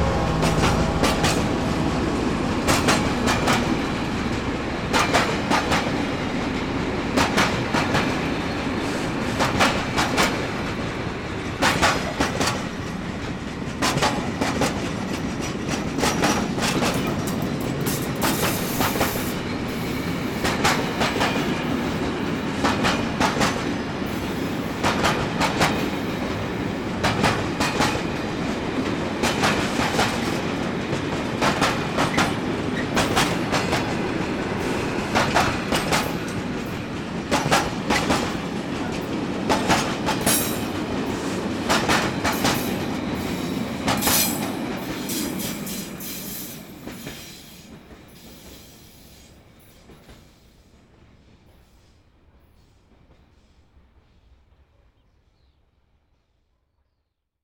Two Belgian class 55 diesel engines with a freight train climbing the ramp towards Visé Haut. Zoom H2.
Vise, Belgium